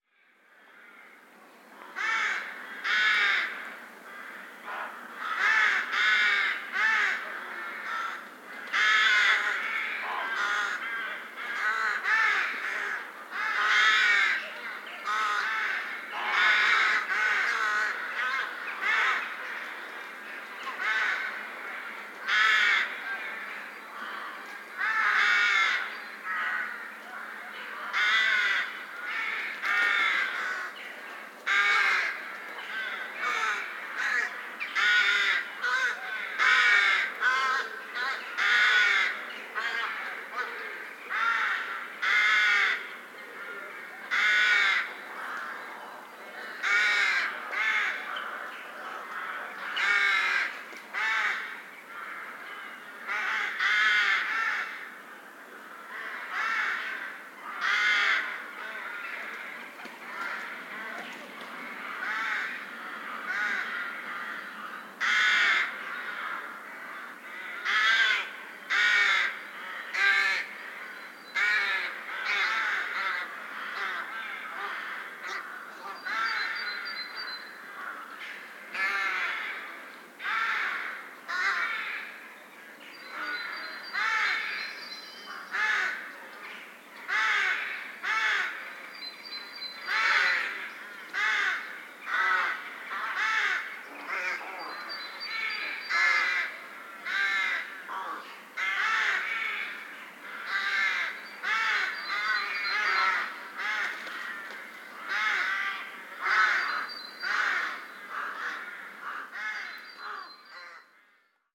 Lubomirski Palace, Przemyśl, Poland - (108) Birds in the park around Lubomirski Palace
Binaural recording of evening birds gathering in Lubomirski's Palace gardens.
Recorded with Soundman OKM + Sony D100